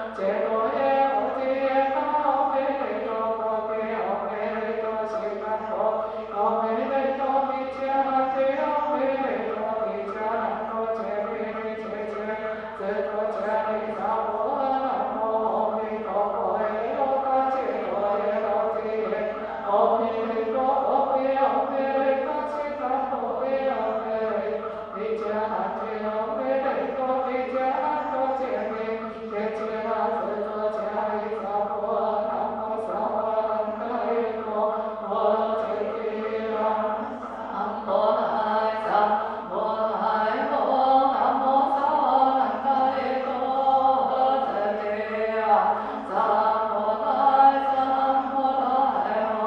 Ackerstraße, Wedding, Berlin, Deutschland - Ackerstraße, Berlin - Singing during the mass in Buddhist temple Fo-guang-shan
Ackerstraße, Berlin - Singing during the mass in Buddhist temple Fo-guang-shan.
[I used an MD recorder with binaural microphones Soundman OKM II AVPOP A3]